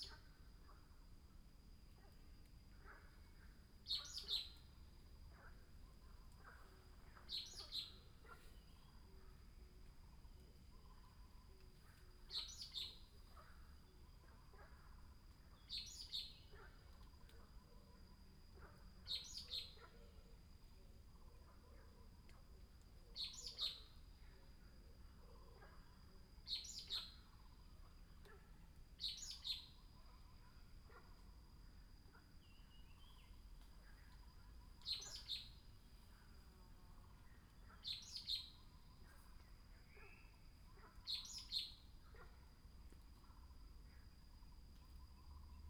birds sound, frogs chirping, in the woods
Puli Township, 華龍巷164號, 20 April 2016